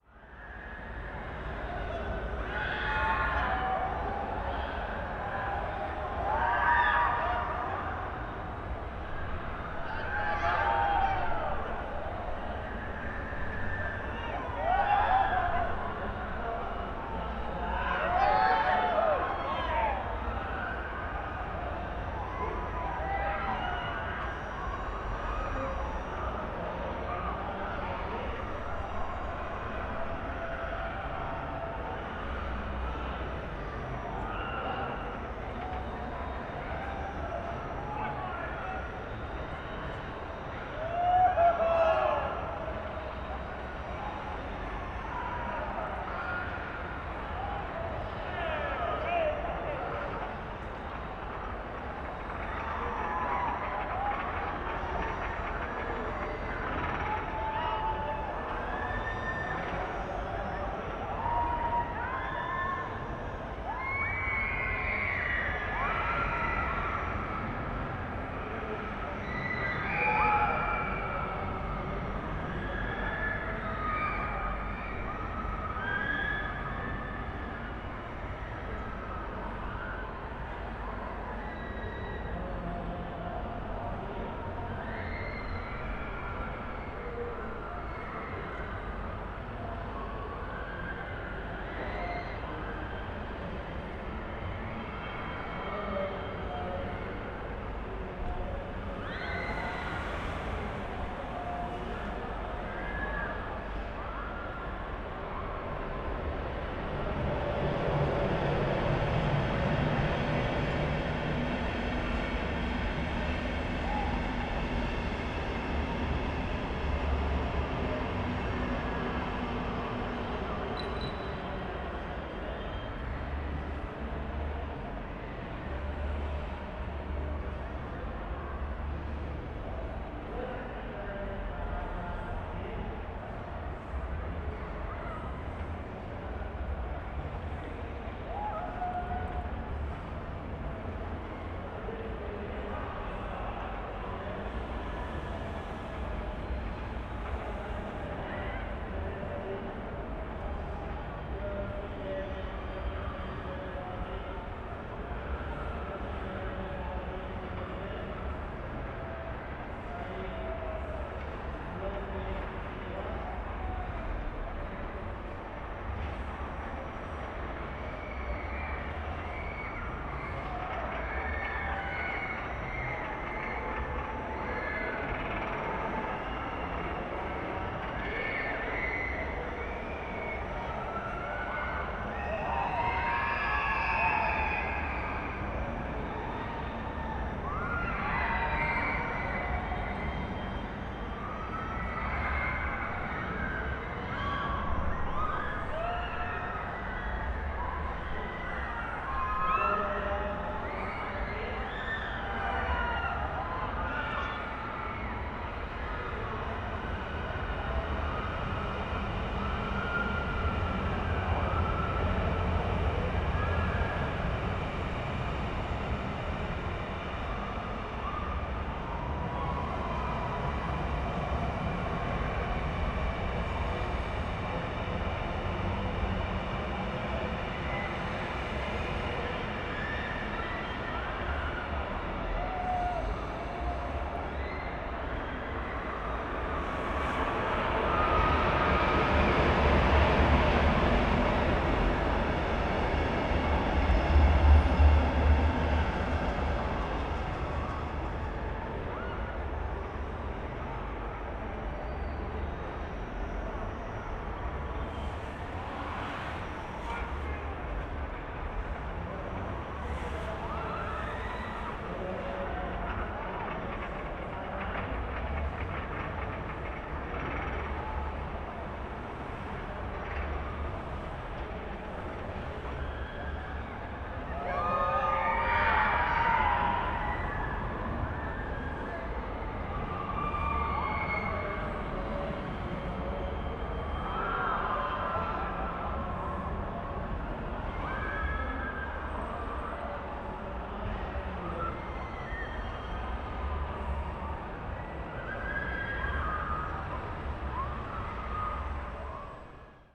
berlin, voltairestr. - christmas market sounds in courtyard
courtyard revisited 1y later. tuning into the diffuse sound field created by echoes and reflections from the nearby christmas market fun fair.